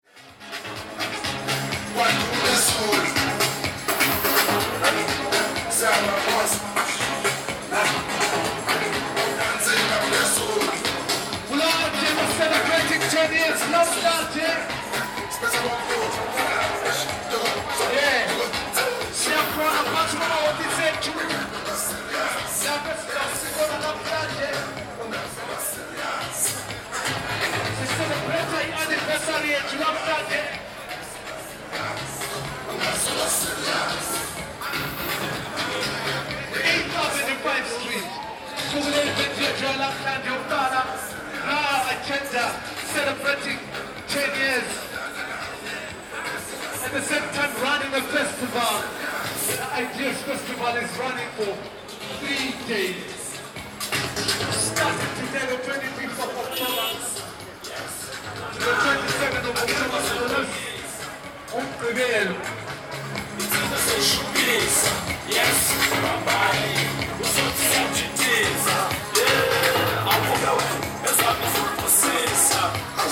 moving down 8th Ave, Bulawayo, Zimbabwe - Radio Dialogues's procession
The bin-aural soundscape recording was made on 8th Ave in Bulawayo on the occasion of a celebratory procession of the Radio Dialogue community in the inner city for the 10th anniversary of the conversational circle Bulawayo Agendas and the launch of the Ideas Festival in the city.
25 October 2012